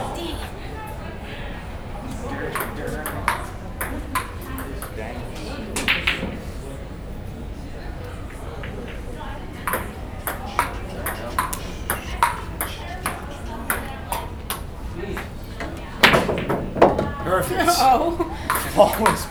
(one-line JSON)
{"title": "Student Center, The College of New Jersey, Pennington Road, Ewing Township, NJ, USA - Game Room", "date": "2014-02-28 17:00:00", "description": "Recording of the chatter in the game room at the TCNJ Stud.", "latitude": "40.27", "longitude": "-74.78", "timezone": "America/New_York"}